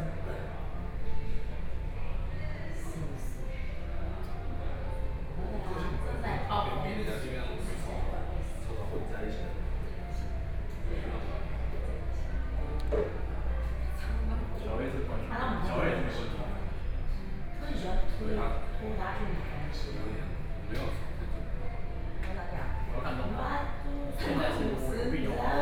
KFC, Taoyuan City - KFC
Young voice conversation, Sony PCM D50 + Soundman OKM II